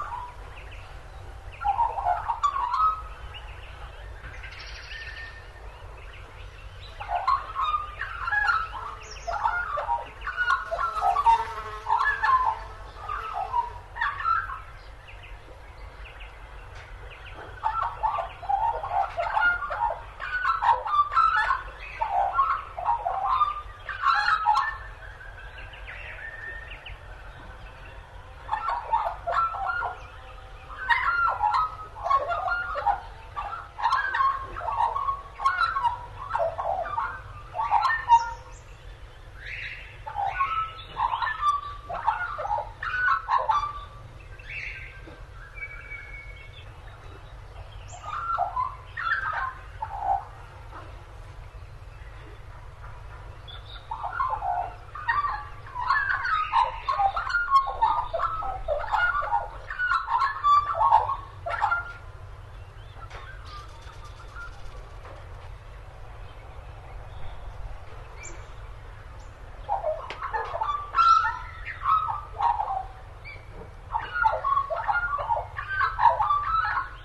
Australian Magpie Calling, Littlehampton, South Australia - Australian Magpie Calling
Male Australian Magpie (gymnorhina tibicen)
Recorded on 6th Sep 2008
Recorded using Schoeps CCM4Lg & CCM8Lg in M/S configuration into a Sound Devices 702 CF recorder. Rycote/Schoeps blimp.